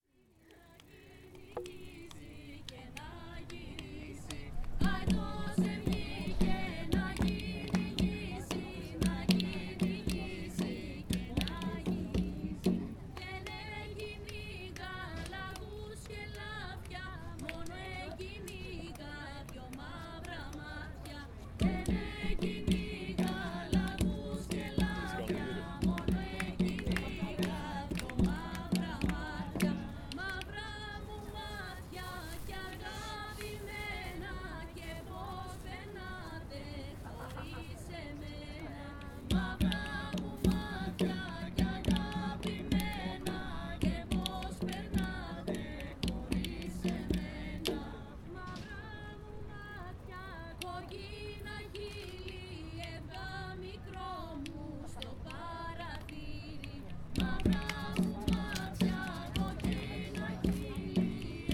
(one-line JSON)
{"title": "Nea Paralia (Garden of Water), Thessaloniki, Greece - A group of youngsters singing a greek traditional song", "date": "2012-07-18 23:50:00", "description": "A lot of people are hanging out at this part of the city, which is near the sea, there is always a light breeze and some times they like to sing or play the guitar.\nMoreover, the 30th ISME World Conference on Music Education was taken place to the nearby Concert Hall of Thessaloniki, so I think that this group was consisted of professional musicians, participants of the conference, who were enjoying themselves.", "latitude": "40.60", "longitude": "22.95", "timezone": "Europe/Athens"}